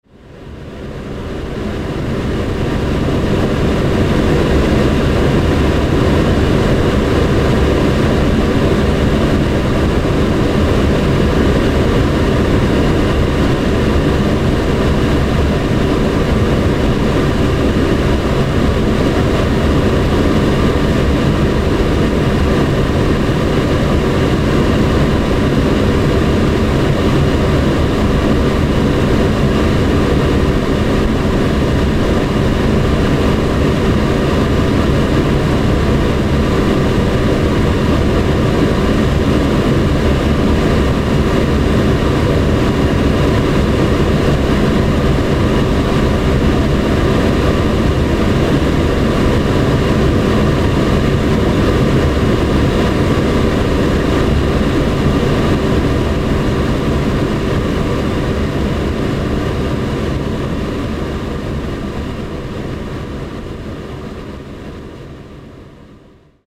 Ouistreham, France - Bunker Cloche 2
Through a hole of the "Bunker Cloche", Ouistreham, Normandy, France, Zoom H6
1 May 2016